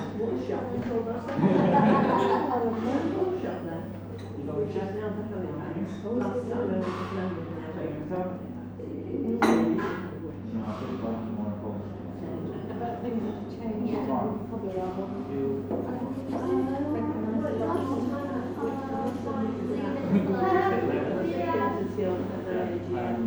Theatre Cafe, Malvern, UK - Theatre Cafe
A long real time recording experience. I am in the large cafe of the theatre late on a cold afternoon. On the left a girl behind the counter is busy, in front two ladies talk and a man carries glasses to lay tables on the right. Various people pass by. Eventually I finish my cake and coffee and walk over to watch a video with music then out into the street where a busker plays a recorder. Finally I walk down an alley to the car park followed by a woman pushing a noisey shopping trolley.
MixPre 6 II with two Sennheiser MKH 8020s in a rucksack.